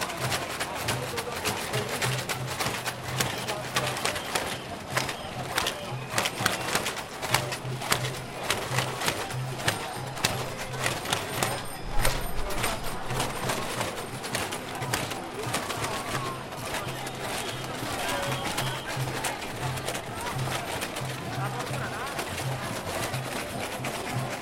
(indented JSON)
{
  "title": "Sector, Bucharest, Romania - Rosia Montana Protests, Bucharest 2013",
  "date": "2013-09-15 19:25:00",
  "description": "Protesting against a gold mining project that threatens Rosia Montana.",
  "latitude": "44.44",
  "longitude": "26.10",
  "altitude": "91",
  "timezone": "Europe/Bucharest"
}